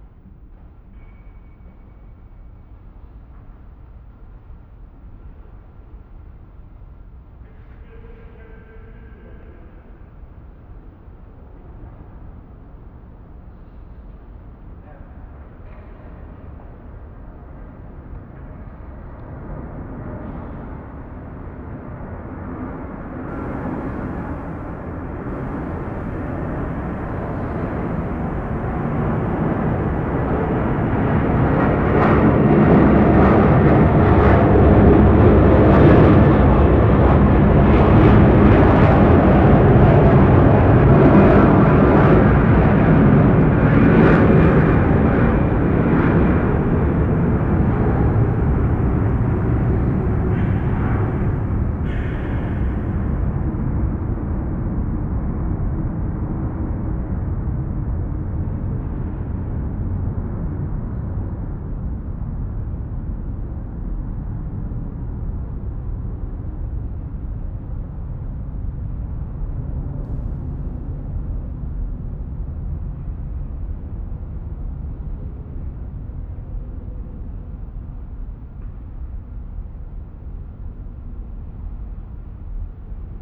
Arena-Sportpark, Am Staad, Düsseldorf, Deutschland - Düsseldorf, Esprita Arena, stadium
Inside the football stadium. The sounds of planes flying across the open football field and reverbing in the audience space and a crow chirping in the open building.
This recording is part of the intermedia sound art exhibition project - sonic states
soundmap nrw -topographic field recordings, social ambiences and art places
Düsseldorf, Germany, 18 December 2012